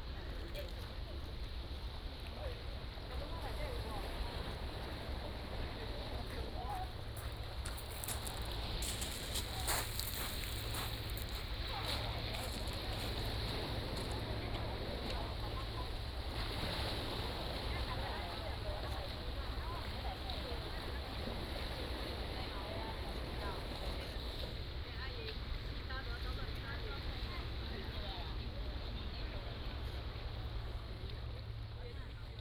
花瓶岩, Hsiao Liouciou Island - In Sightseeing
In Sightseeing, Sound of the waves, Tourists are dabble